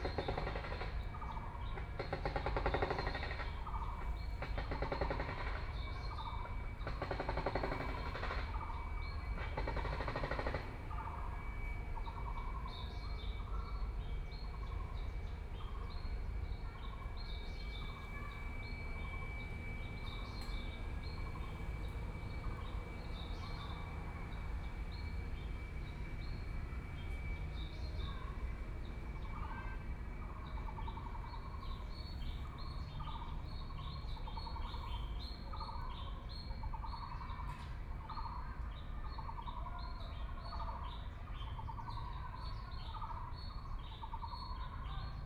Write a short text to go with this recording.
Sitting in the park, Construction noise, Birdsong, Insects sound, Aircraft flying through, Binaural recordings